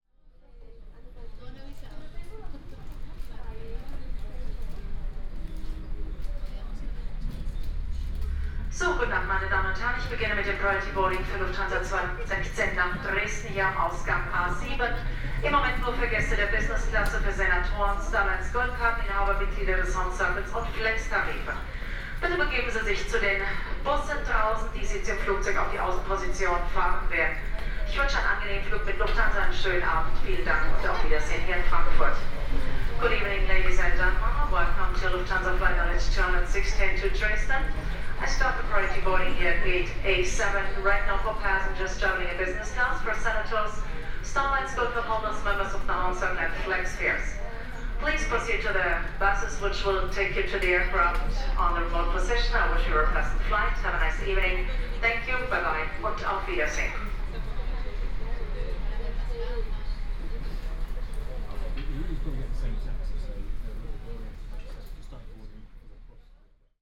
Frankfurt Airport, Germany - (428) Flight announcement at Frankfurt airport
Flight announcement played in the airport shuttle bus.
recorded with Soundman OKM + Sony D100
sound posted by Katarzyna Trzeciak